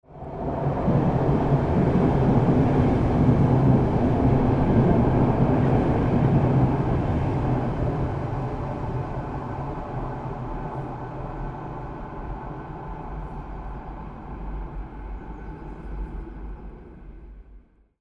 Fisksätra, Nacka, Suède - Passage du train
1 May 2013, ~17:00